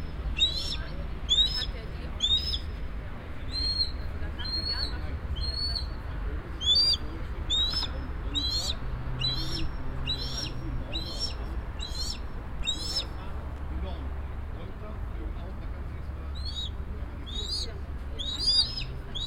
cologne, rheinauhalbinsel, schokomuseum, seegreifvögel
seeadler und andere greifvögel bei einer tierschau auf der museumsdachterasse, morgens
soundmap nrw:
social ambiences, topographic field recordings
rheinauhalbinsel, schokoladenmuseum